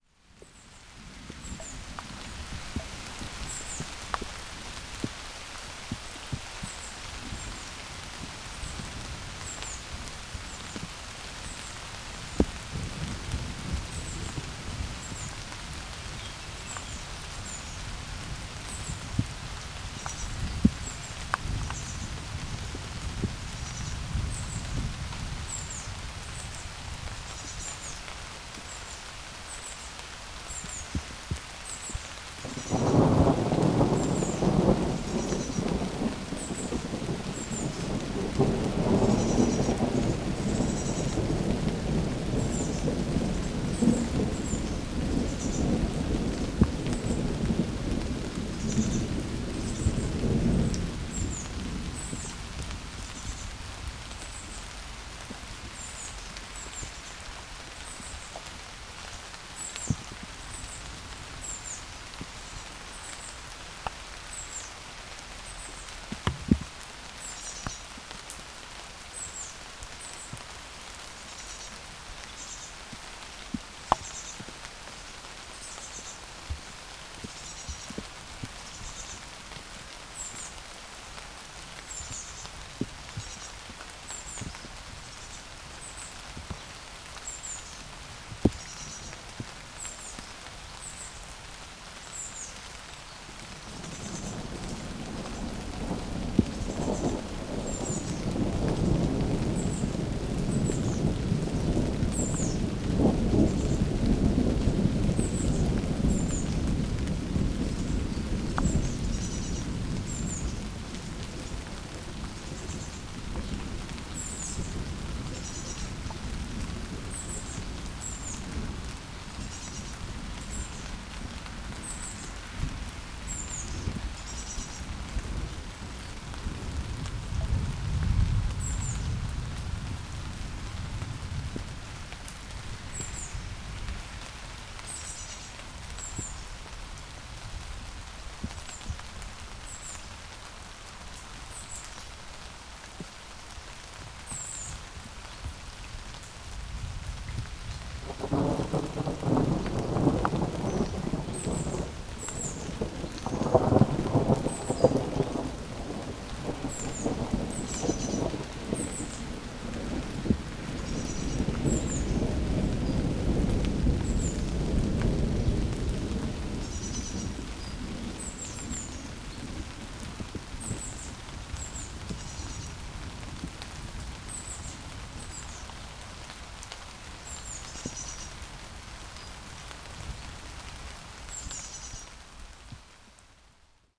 Piatkowo district, Marysienki alotments - storm return
storm returns. fine drops of rain disperse over leaves. some heavy drops hit the body of the recorder. thunder lurk in the distance.
Poznań, Poland